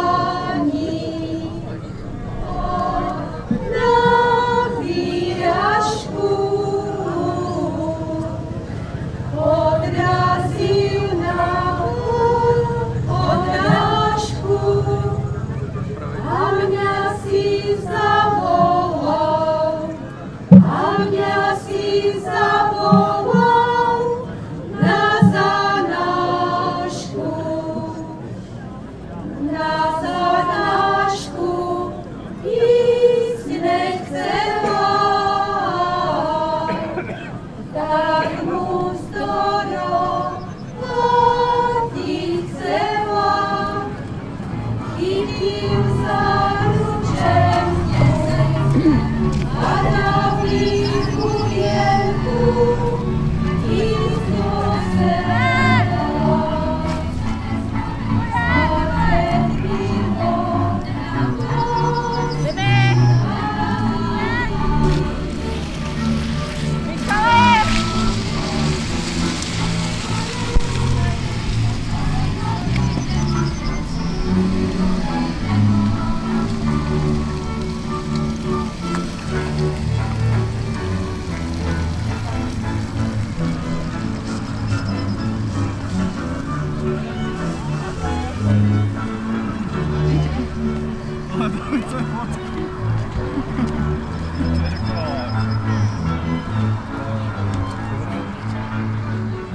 Kuželov, wind mill, hornacke slavnosti
traditional folklor feast at Kuzelov, happening every july. local people from several villages around singing and dancing.
24 July 2011, ~20:00